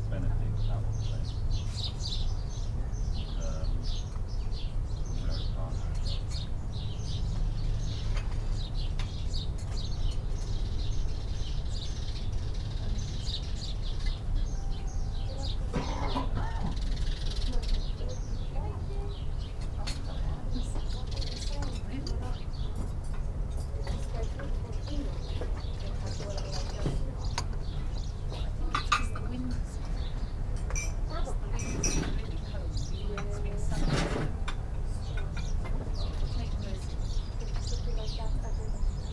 Sparrows in the garden of La Vina, Lymington, Hampshire, UK - Sparrows in the garden of the restaurant
We were in need of breakfast and the High Street was heaving with people and a very busy market. We stepped into the first place we saw advertising a breakfast and discovered to our delight that it had a huge back garden full of tables, sunlight and sparrows. A large building to the right had holes beneath the tiles in which the sparrows were either nesting or finding tasty treats to eat! Little speakers disseminated Spanish music into the garden and this mixed nicely with the little clinks and clanks of cups of coffee and spoons and wee jugs of cream. You can also hear the low background drone of traffic on the encircling roads. But up front and centre are the sparrows who flew back and forth while we had our breakfast and filled the air with their wondrous and busy little sounds.